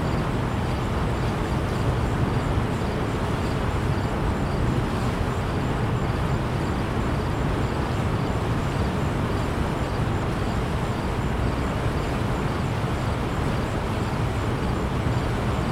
Outlet Chute, Council Bluff Dam, Missouri, USA - Outlet Chute
Recording at base of Council Bluff Dam near outlet chute.